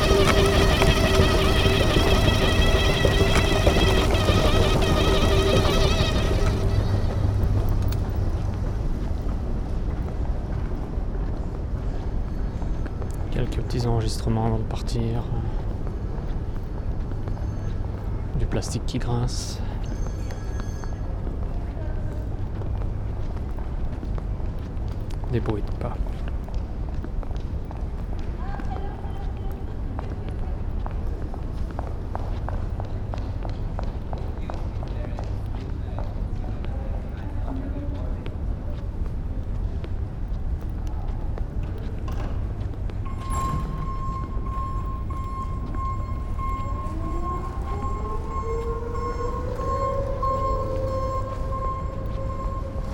Brussels National Airport, just before flying with delay to Lyon.
à laéroport de Zaventem, avant de prendre mon vol qui avait du retard.
Steenokkerzeel, Belgium, 8 November